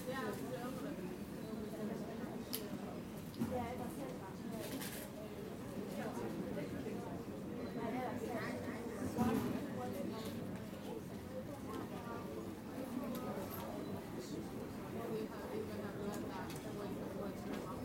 london stansted, gate
recorded july 19, 2008.